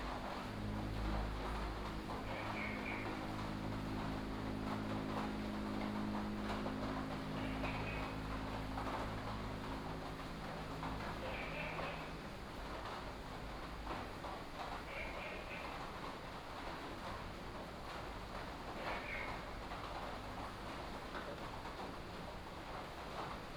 Woody House, 埔里鎮桃米里 - Rainy Day
Rainy Day, Frog chirping, Inside the restaurant